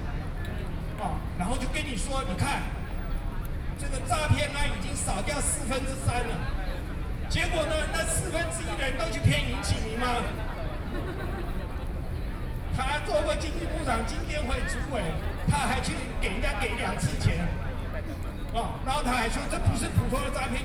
anti–nuclear power, Sony PCM D50 + Soundman OKM II